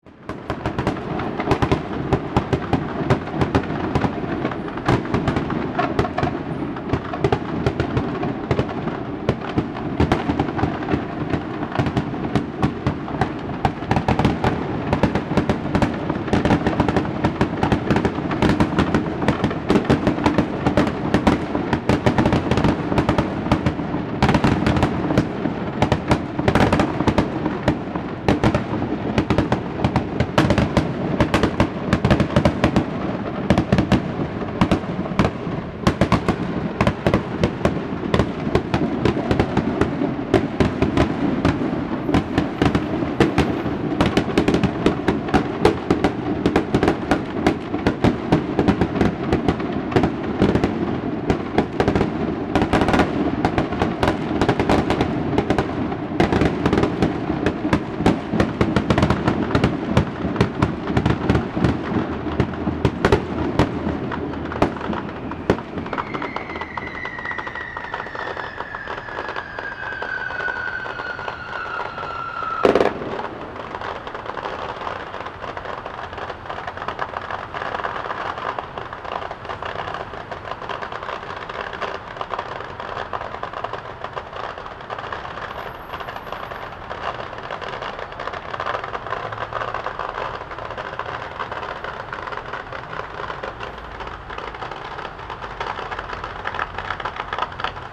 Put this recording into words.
Fireworks and firecrackers, Zoom H4n+ Rode NT4